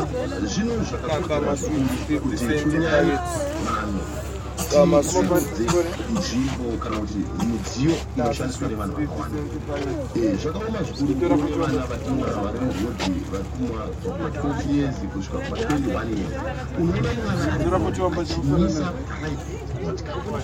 Entumbane Rank, Bulawayo, Zimbabwe - Binga bus leaving Entumbane rank
...the bus is still filled with traders when it starts leaving the rank… the driver reminds that they have to leave… and soon is the last change to drop out… one passenger says a prayer… and off we go on a 6 – 8 hours journey to “the back of beyond”…
(...the mic is an unusual feature… I think it’s the only time in my many journeys that I heard it functioning...)
mobile phone recording